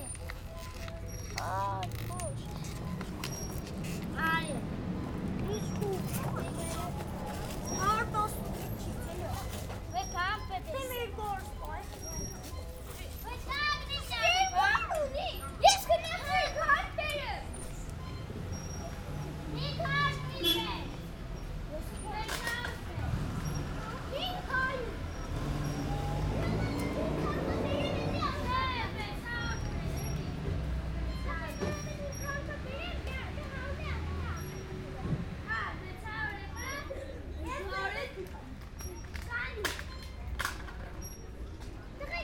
Gyumri, Arménie - Children
While we were eating in a park, some children went and played around us. They were playing football with an old plastic bottle.